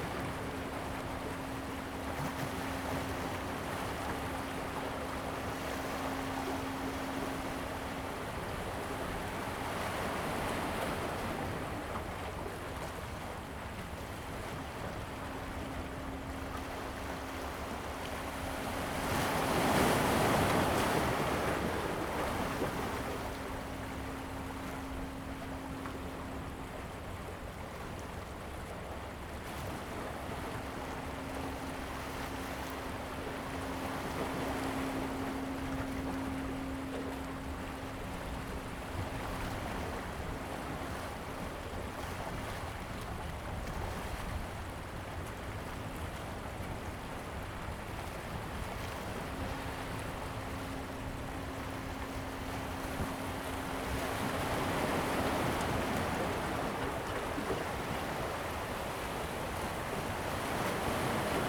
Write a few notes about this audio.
Sound of the waves, There are large cargo ships on the sea, Zoom H2n MS+ XY